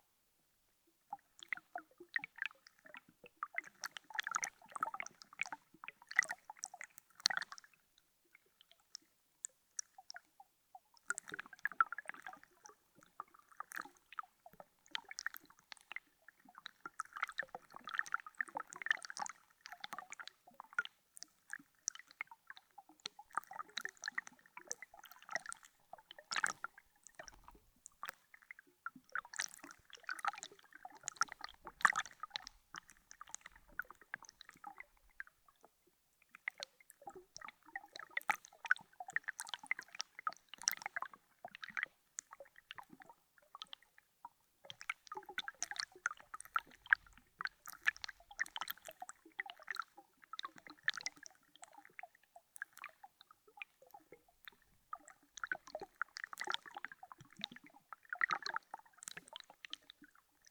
Lithuania, Vilnius, on a tiny ice
contact microphones placed on a tiny ice of the river's edge
30 January 2013